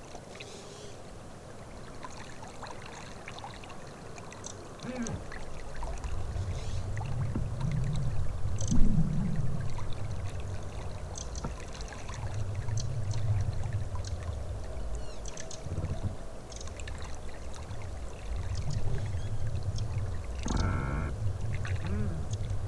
Šventupys, Lithuania, soundscape with singing tree
river soundscape with "singing" pine tree recorded with contact mics
Anykščių rajono savivaldybė, Utenos apskritis, Lietuva, 27 December 2020, 14:50